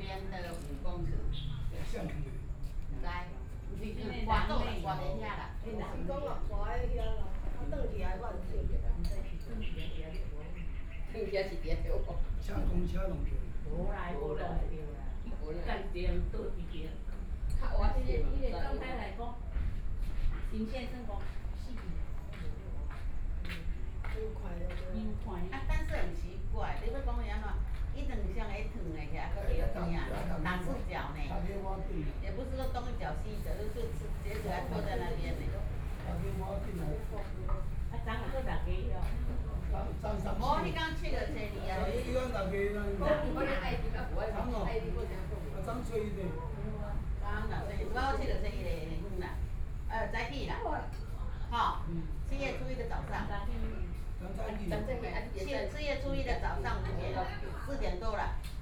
北濱公園, 蘇澳鎮南寧里 - in the Park
in the Park, Traffic Sound, Hot weather, A group of tourists being brewed coffee and a chat
28 July 2014, 15:36